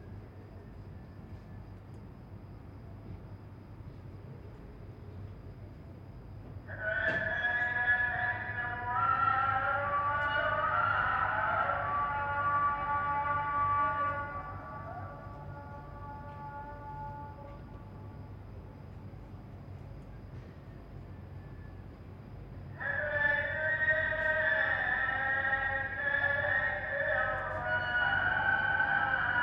Marina Göcek, Turkey - 918c Muezzin call to prayer (early morning)
Recording of an early morning call to prayer.
AB stereo recording (17cm) made with Sennheiser MKH 8020 on Sound Devices MixPre-6 II.
Ege Bölgesi, Türkiye, 23 September 2022